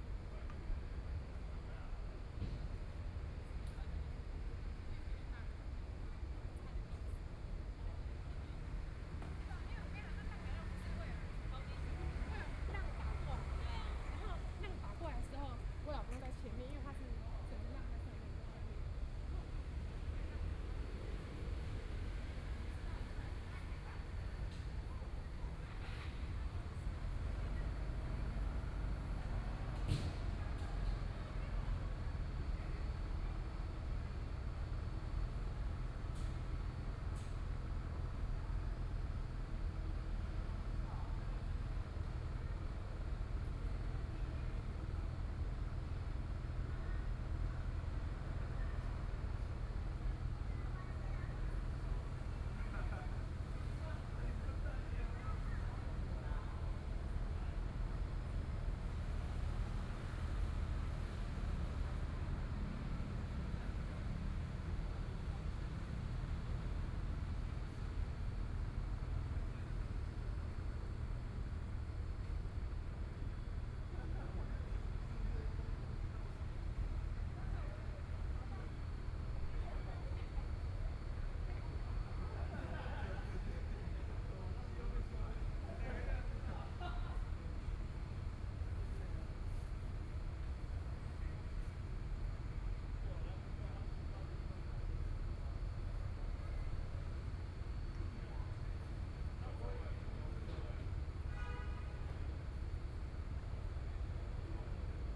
Night in the park, Traffic Sound, Aircraft flying through
Binaural recordings
Zoom H4n+ Soundman OKM II